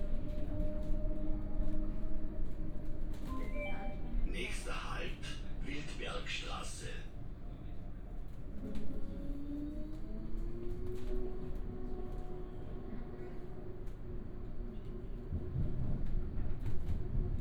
Linz, Harbach, Tram - tram ride
tram ride on line 1 towards University
(Sony PCM D50, OKM2)
7 September, 19:15